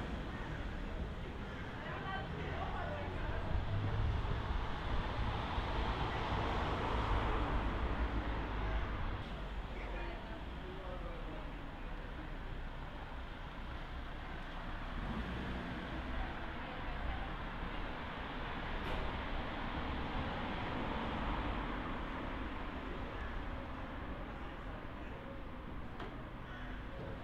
Volos, Greece - Gallias Street
Sounds, from the 1st floor balcony, of students having their break from an english lesson.
February 2016